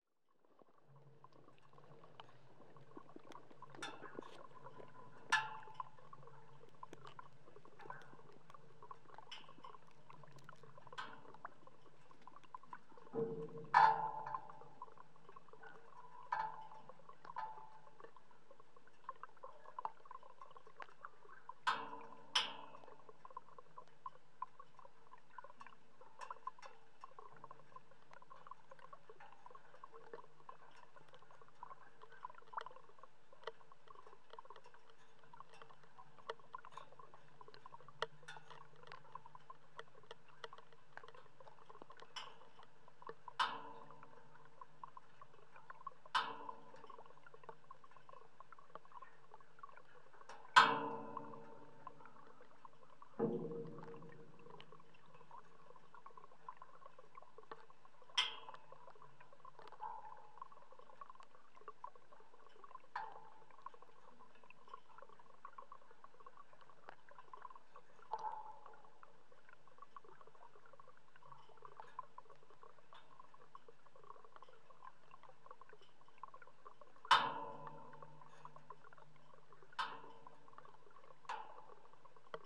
Grybeliai, Lithuania, metallic bridge constructions
frozen pond and metallic bridge construction on it